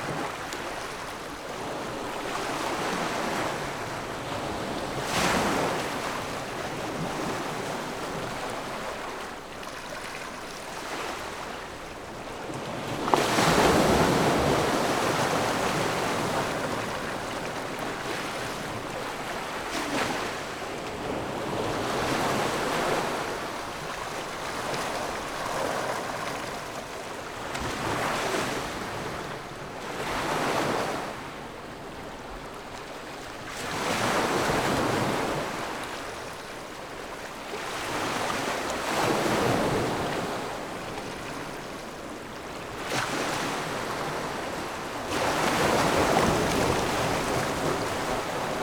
津沙村, Nangan Township - Sound of the waves
At the beach, Sound of the waves
Zoom H6 +Rode NT4